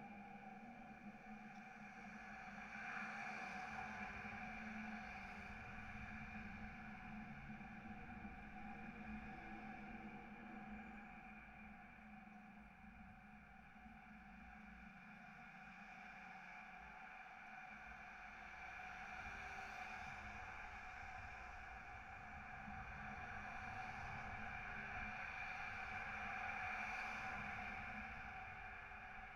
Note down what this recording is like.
The UBahn tracks here are elevated above the road. These are the vibrations in one of the massive metal supports recorded with a contact mic. Between trains not much is audible in the structure - just very minimal traffic - so I've edited to shorten the gaps. The sound is somewhat different depending on train direction. In this recording it is eastbound followed by westbound, repeated twice.